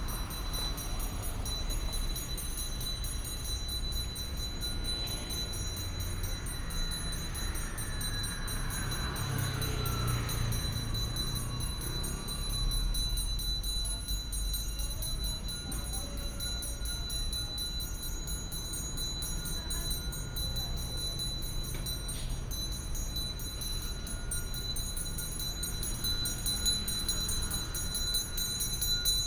Wufu Rd., Luzhu Dist. - Altar and traffic sound
Altar and traffic sound, Dog sounds
Taoyuan City, Taiwan